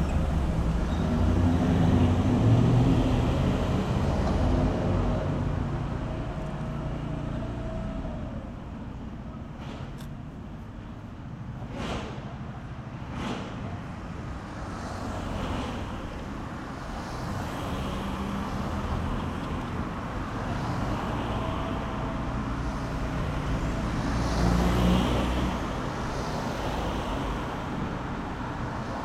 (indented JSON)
{
  "title": "leipzig lindenau, demmeringstraße, genau vor dem d21",
  "date": "2011-09-01 11:20:00",
  "description": "die kreuzung vor dem d21 in der demmeringstraße um die mittagszeit. autos, straßenbahnen, radfahrer als urbane tongeber.",
  "latitude": "51.34",
  "longitude": "12.33",
  "timezone": "Europe/Berlin"
}